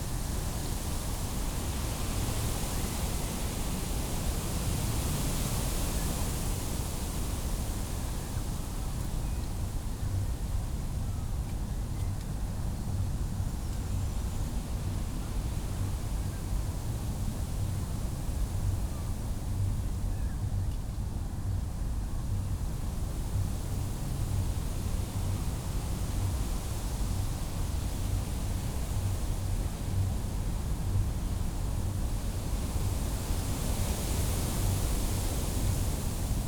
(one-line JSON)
{"title": "Tempelhofer Feld, Berlin - Wind in Robinia bush, drone of sound system", "date": "2019-06-15 18:20:00", "description": "behind a big Robinia bush, fresh wind, distant sound system drone, annoying since it can be heard often these days\n(Sony PCM D50, DPA4060)", "latitude": "52.48", "longitude": "13.41", "altitude": "49", "timezone": "Europe/Berlin"}